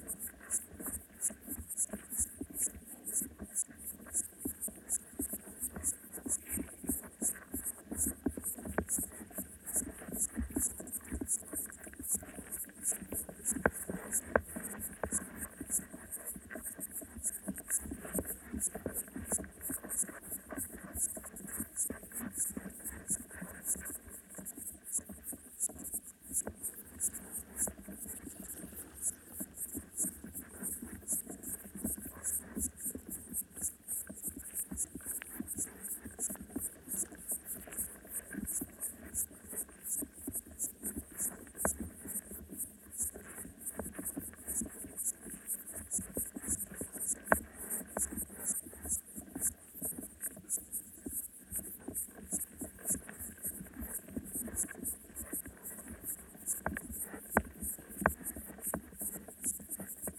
Utena, Lithuania, Kloviniai lake underwater
Hydrophone recording in Kloviniai lake. And soon there will be another landscape because our city council decided to drain the water in the lake for the reason of dam repair...
Utenos rajono savivaldybė, Utenos apskritis, Lietuva, 21 June 2021